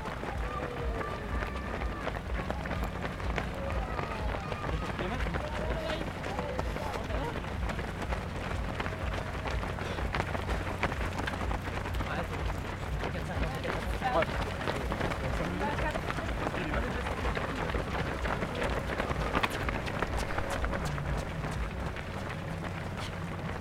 Le passage des 2500 engagés des 10km du lac, course à pied organisée par l'ASA Aix-les-bains depuis de nombreuses années. à 1000m du départ.
France métropolitaine, France, 4 September 2022